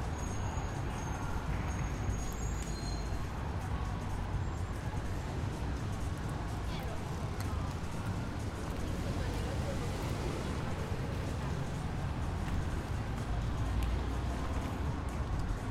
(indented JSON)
{
  "title": "Escher Wyss, Zürich, Sound and the City - Sound and the City #19",
  "date": "2012-07-18 20:10:00",
  "description": "Die Beats aus der nahen Dance Factory – die Bewegungen sind durch die offene Glasarchitektur nicht nur zu sehen, sondern auch zu hören – rücken die Stadtgeräusche in ein anderes Licht: Die Schritte über den kleinen Kiesplatz fügen sich in den Puls, eine Hupe spielt mit, Gesprächsfetzen bewegen sich, so auch Tellerklappern und Verkehrsgeräusche. Bremsquietschen, weg- und anfahrende Trams.\nArt and the City: Alexander Hanimann (Vanessa, 2012)",
  "latitude": "47.39",
  "longitude": "8.52",
  "altitude": "411",
  "timezone": "Europe/Zurich"
}